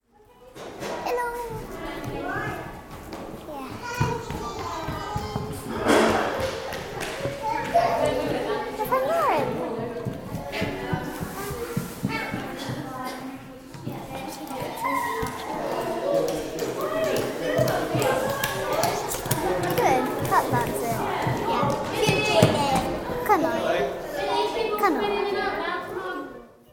Efford Walk Two: In church - In church